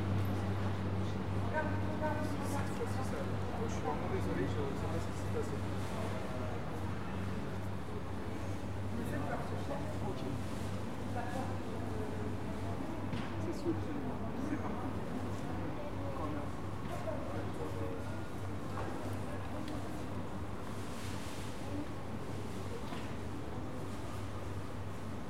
Gare Sncf, Bd Frédéric Mistral, Narbonne, France - train station Narbonne
train station Narbonne
Captation : Zoomh4n
Occitanie, France métropolitaine, France, 30 April 2022, 15:05